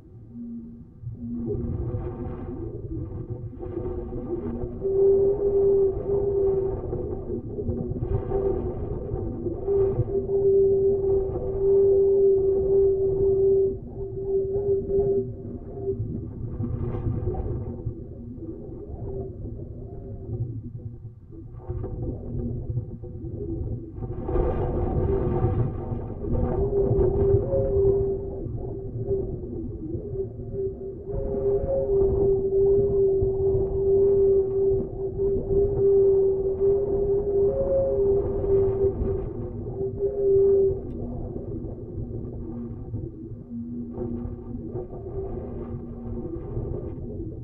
rusted holes in an old railing become flutes in the wind